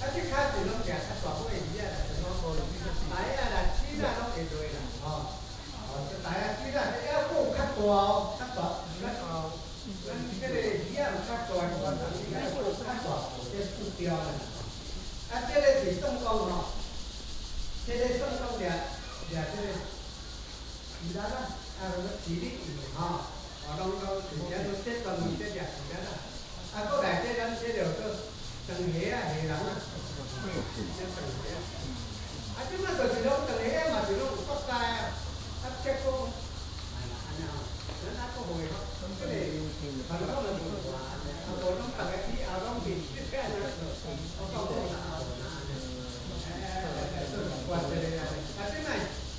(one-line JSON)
{"title": "Paper Dome, 桃米里 Puli Township - Press conference", "date": "2016-09-16 10:46:00", "description": "Old people introduce traditional fishing tools, Cicadas sound, Traffic sound", "latitude": "23.94", "longitude": "120.93", "altitude": "472", "timezone": "Asia/Taipei"}